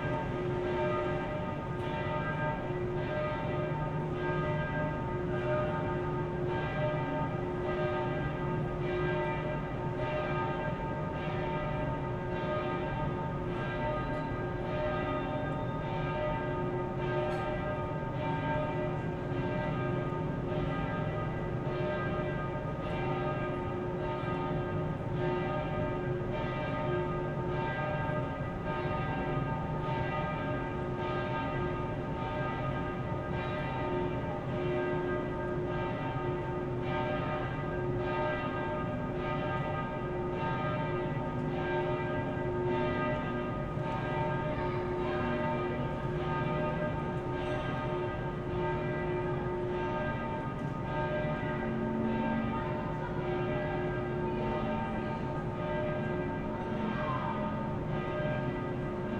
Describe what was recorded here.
yard ambience at Gospejna ulica, bells from the nearby church at noon, hum of many aircons, (SD702 Audio Technica BP4025)